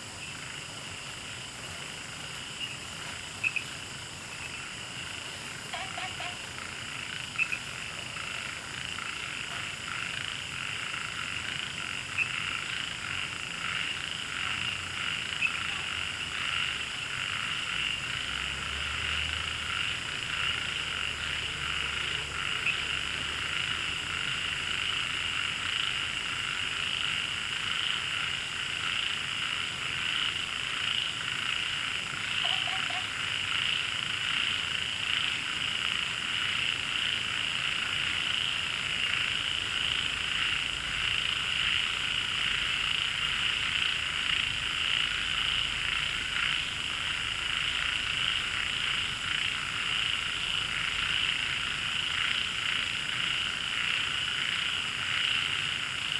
{
  "title": "MaoPuKeng Wetland Park, Puli Township - Frog chirping",
  "date": "2015-08-11 19:45:00",
  "description": "Frog chirping, Many frogs\nZoom H2n MS+XY",
  "latitude": "23.94",
  "longitude": "120.94",
  "altitude": "474",
  "timezone": "Asia/Taipei"
}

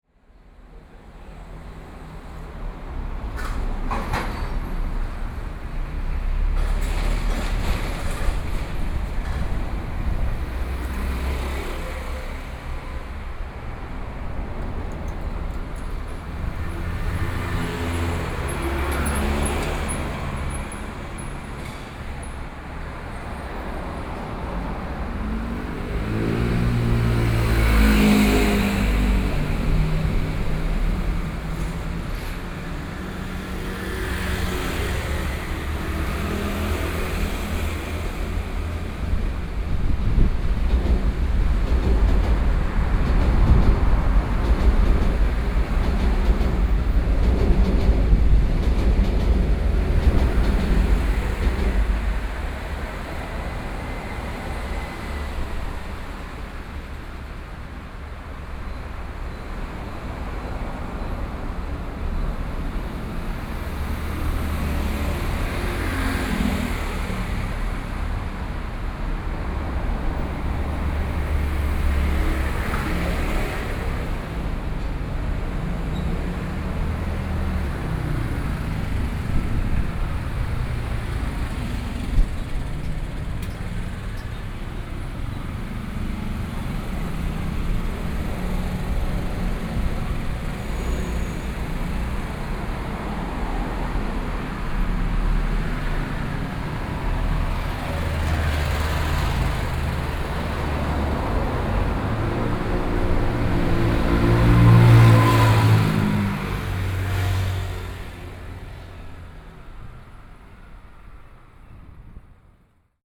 {
  "title": "Zhongli City, Taoyuan County - Traffic noise",
  "date": "2013-09-16 13:51:00",
  "description": "in front of Underpass, Traffic noise, Train traveling through, Sony PCM D50 + Soundman OKM II",
  "latitude": "24.95",
  "longitude": "121.23",
  "altitude": "130",
  "timezone": "Asia/Taipei"
}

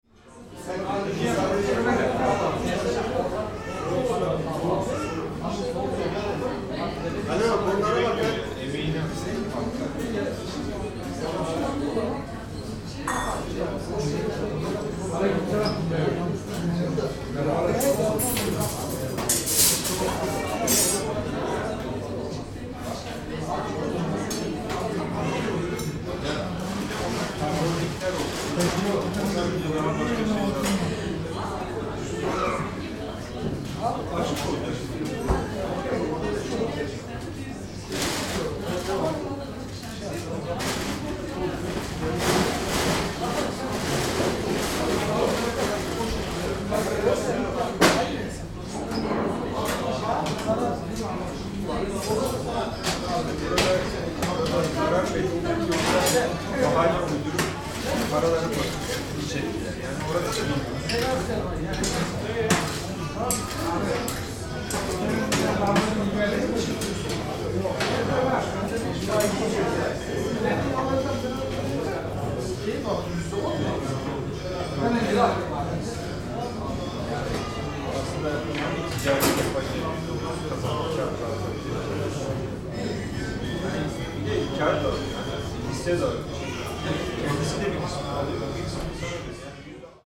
{
  "title": "Köln Mülheim, Keupstr. - inside Kilim restaurant",
  "date": "2009-03-24 19:30:00",
  "description": "24.03.2009 19:30 this was my favorite place for food when i lived in this area. 1 chicken soup, 2 lahmacun (turkish pizza)",
  "latitude": "50.96",
  "longitude": "7.01",
  "altitude": "51",
  "timezone": "Europe/Berlin"
}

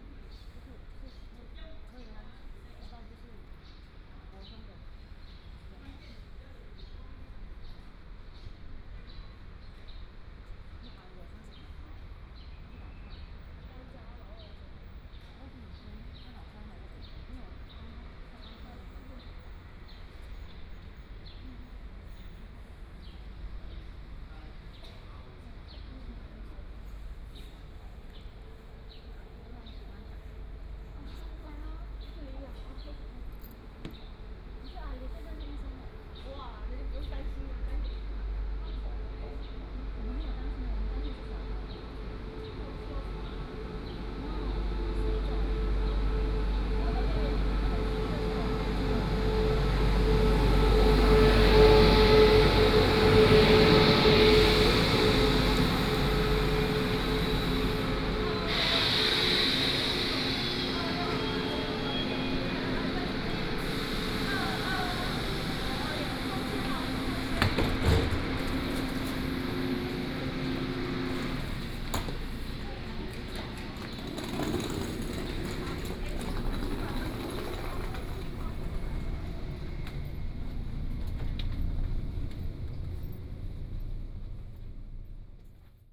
{
  "title": "員林火車站, Yuanlin City - On the platform",
  "date": "2017-01-25 09:46:00",
  "description": "On the platform, The train arrives",
  "latitude": "23.96",
  "longitude": "120.57",
  "altitude": "32",
  "timezone": "GMT+1"
}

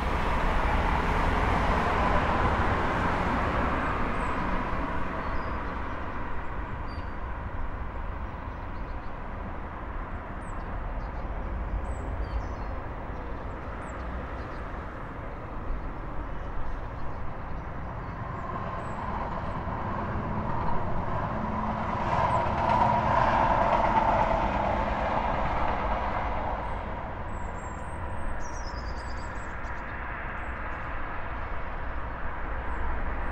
{
  "title": "Suburban Manchester",
  "date": "2010-02-27 23:16:00",
  "description": "I wish the cars would stop, so that I can enjoy the birdsong...",
  "latitude": "53.54",
  "longitude": "-2.28",
  "altitude": "103",
  "timezone": "Europe/London"
}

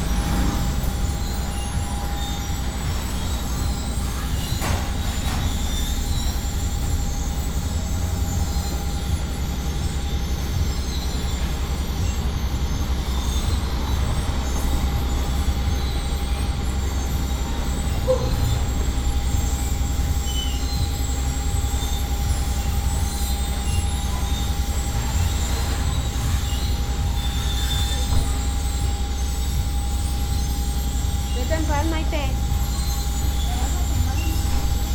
{
  "title": "Limbo, Obregon, León, Gto., Mexico - Afuera de una tortillería en calle Limbo de la colonia Obregón, en León, Guanajuato.",
  "date": "2020-02-15 14:32:00",
  "description": "Outside of a tortilleria.\nI made this recording on February 15, 2020, at 2:32 p.m.\nI used a Tascam DR-05X with its built-in microphones and a Tascam WS-11 windshield.\nOriginal Recording:\nType: Stereo\nEsta grabación la hice el 15 de febrero 2020 a las 14:32 horas.",
  "latitude": "21.13",
  "longitude": "-101.69",
  "altitude": "1810",
  "timezone": "America/Mexico_City"
}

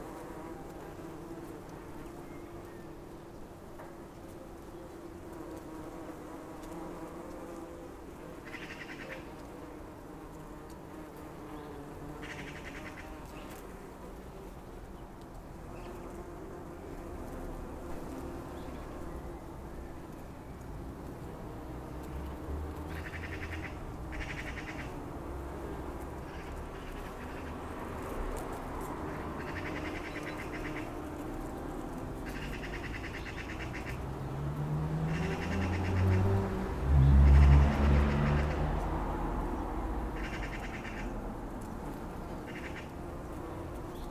{
  "title": "Klein Holland, Londerzeel, België - bees and poppies",
  "date": "2018-07-07 09:26:00",
  "description": "Zoom H6- XY mic",
  "latitude": "51.01",
  "longitude": "4.30",
  "altitude": "9",
  "timezone": "Europe/Brussels"
}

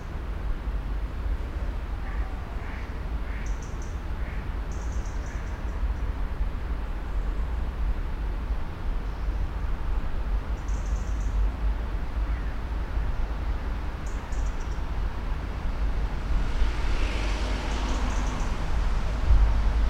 {
  "title": "Trebestraße, Bad Berka, Deutschland - Flight of the Geese. *Binaural.",
  "date": "2020-10-23 18:18:00",
  "description": "Location: Wellness city of Bad Berka, Thuringia State, Germany.\n*Binaural sound is intended for playback on headphones so please use one for spatial immersion.",
  "latitude": "50.90",
  "longitude": "11.29",
  "altitude": "273",
  "timezone": "Europe/Berlin"
}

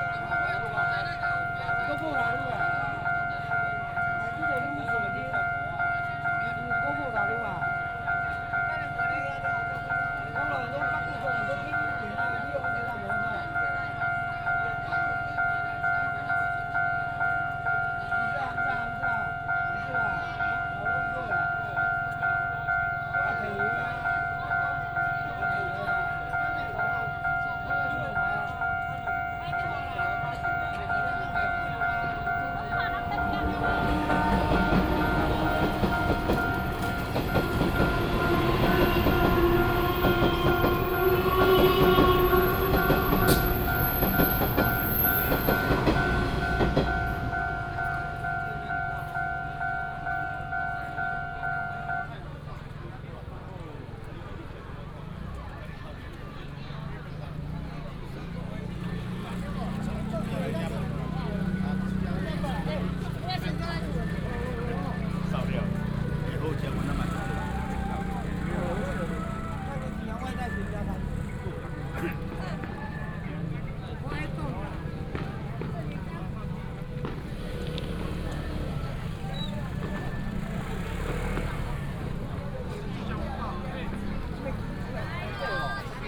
{
  "title": "Baixi, Tongxiao Township - Walking on the road",
  "date": "2017-03-09 09:58:00",
  "description": "Walking on the road, Matsu Pilgrimage Procession, railway level crossing, The train passes by",
  "latitude": "24.57",
  "longitude": "120.71",
  "altitude": "14",
  "timezone": "Asia/Taipei"
}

